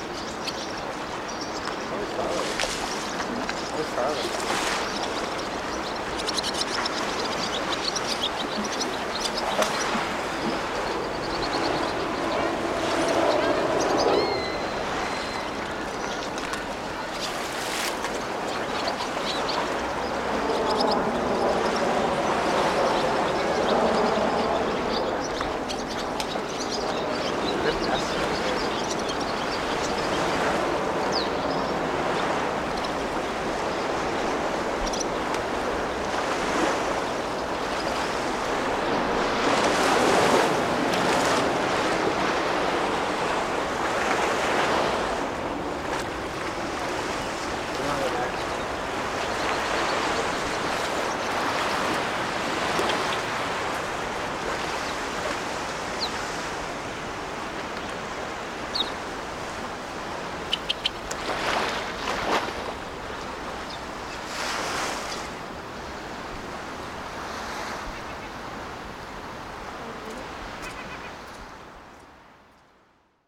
{"title": "Dog Beach, Sheridan Rd, Evanston, IL, USA - under the rocks", "date": "2022-05-05 14:10:00", "description": "recording under the wave-breaking rocks", "latitude": "42.05", "longitude": "-87.67", "altitude": "181", "timezone": "America/Chicago"}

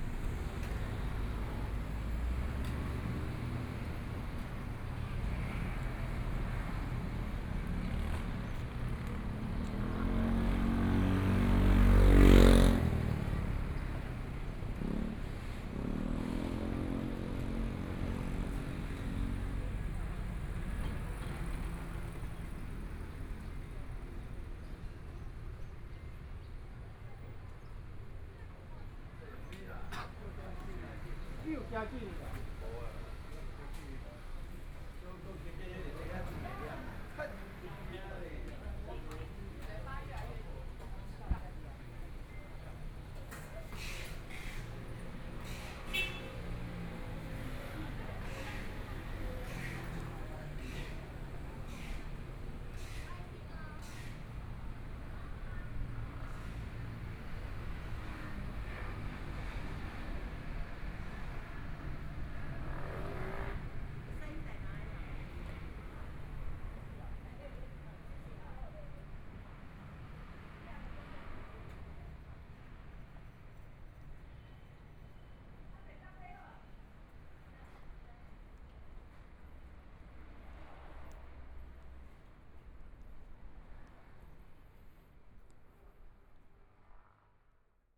{"title": "Longjiang Rd., Taipei City - Walking across the different streets", "date": "2014-02-17 17:30:00", "description": "Walking across the different streets, Traffic Sound, Sound a variety of shops and restaurants\nPlease turn up the volume\nBinaural recordings, Zoom H4n+ Soundman OKM II", "latitude": "25.06", "longitude": "121.54", "timezone": "Asia/Taipei"}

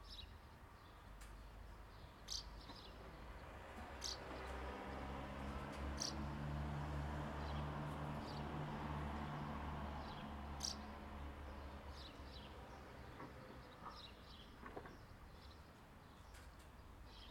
{"title": "Common house martin - Delichon urbicum", "date": "2021-07-17 12:56:00", "description": "Common house martin (Delichon urbicum) singing. Village life on a Saturday afternoon. Recorded with Zoom H2n (XY, on a tripod, windscreen) from just below the nest.", "latitude": "46.18", "longitude": "16.33", "altitude": "203", "timezone": "Europe/Zagreb"}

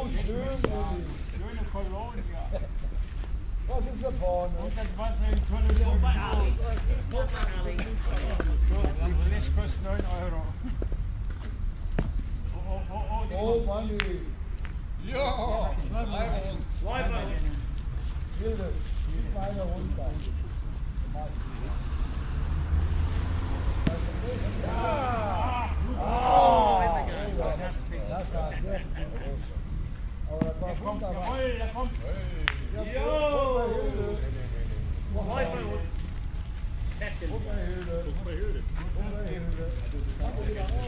{"title": "erkrath, leo heinen platz - boule spiel", "date": "2008-04-18 13:39:00", "description": "tägliches, morgendliches spielritual einer gemeinschaft älterer menschen - aufnahme im frühjahr 07\nproject: :resonanzen - neanderland soundmap nrw: social ambiences/ listen to the people - in & outdoor nearfield recordings", "latitude": "51.22", "longitude": "6.91", "altitude": "57", "timezone": "Europe/Berlin"}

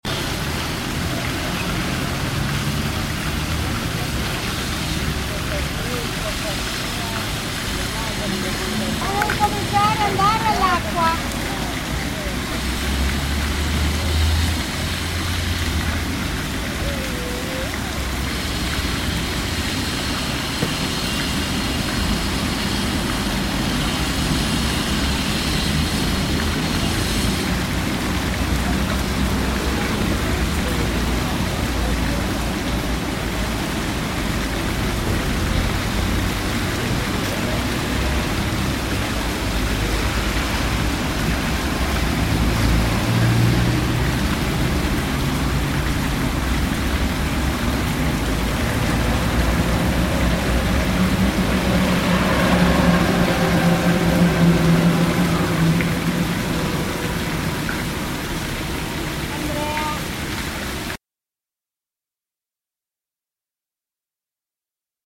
{"title": "Piazza libertà, San lorenzo, Piazza libertà", "date": "2007-07-15 15:48:00", "description": "piazza libertà a S. Lorenzo (luglio 2007)", "latitude": "45.57", "longitude": "8.96", "altitude": "184", "timezone": "Europe/Rome"}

